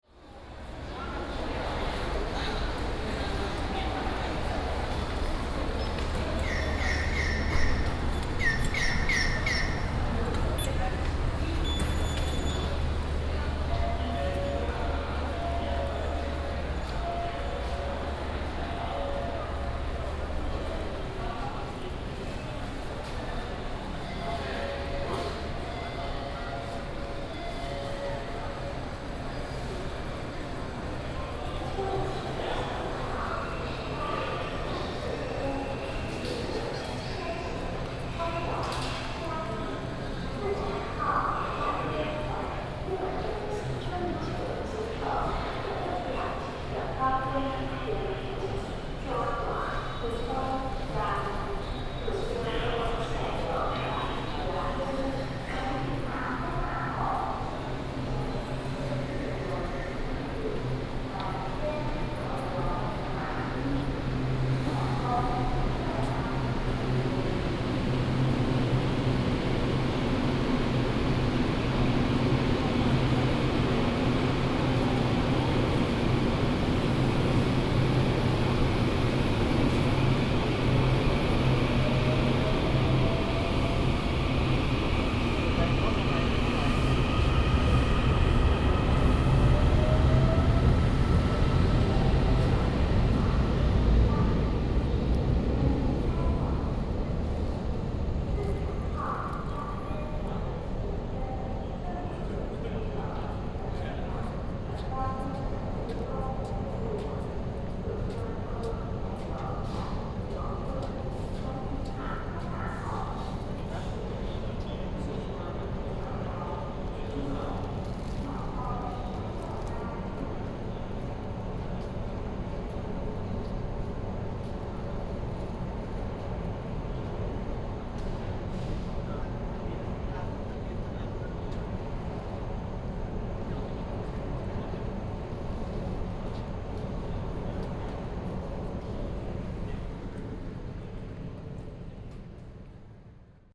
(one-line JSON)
{"title": "Taoyuan Station, Taoyuan City, Taiwan - walking in the Station", "date": "2016-10-12 18:11:00", "description": "From the train station to the platform, Station broadcast message sound, The train leaves", "latitude": "24.99", "longitude": "121.31", "altitude": "101", "timezone": "Asia/Taipei"}